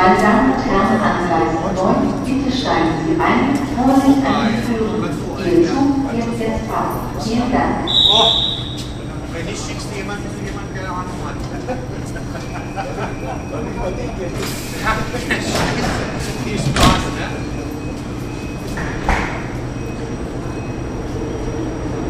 hannover hbf verspätung, gleis 9